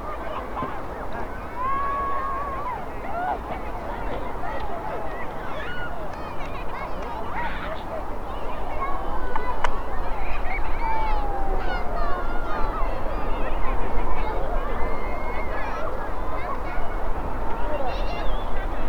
Vistula riverbank, Kraków, Poland - (722 UNI) Children playing in distance on snowy winter Sunday
Recording of children playing taken from across the river. During the time of recording on tram passed on the bridge on the left and one train on the bridge on the right.
Recoreded with UNI mics of Tascam DR100 MK III.
województwo małopolskie, Polska, January 2021